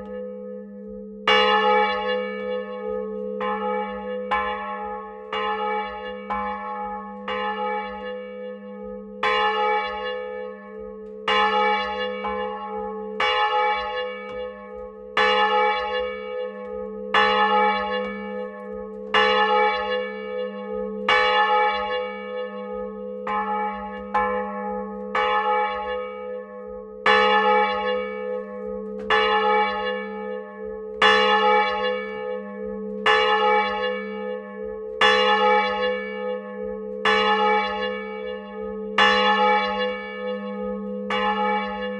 église de Therouanne (Pas-de-Calais) - clocher
cloche 2 - volée manuelle
Hauts-de-France, France métropolitaine, France, March 2022